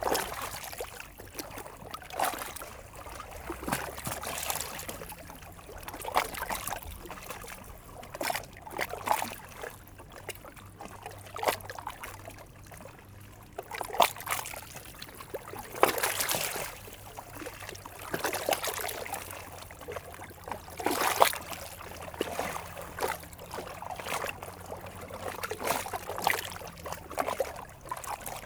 Croissy-Sur-Seine, France - River Seine
Recording of the quiet river Seine near the Bougival sluice.